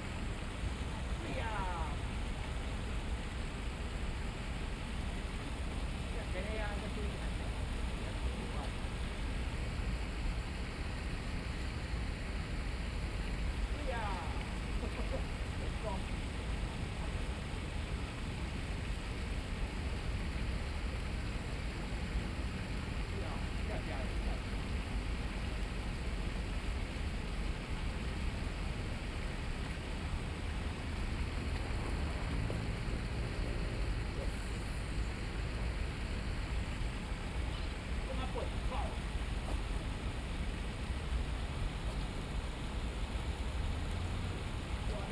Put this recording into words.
Traffic Sound, Standing estuary, The sound of water, Angler, Running sound, Bicycle through, Environmental sounds, Binaural recordings